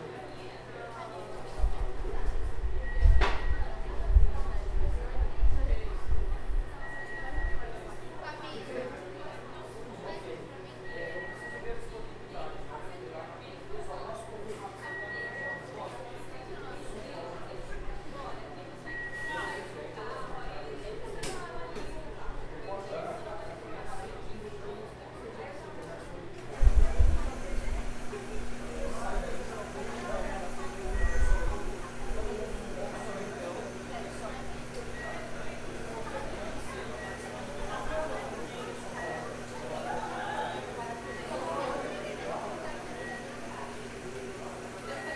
Av. Paulista - Bela Vista, São Paulo - SP, 01310-200, Brazil - Starbucks Coffee - São Paulo
Gravação ambiente do Starbucks da Avenida Paulista numa manhã de dia de semana.
Gravação feita por: Luca, Luccas, Bianca e Rafael
Aparelho usado: Tascam DR-40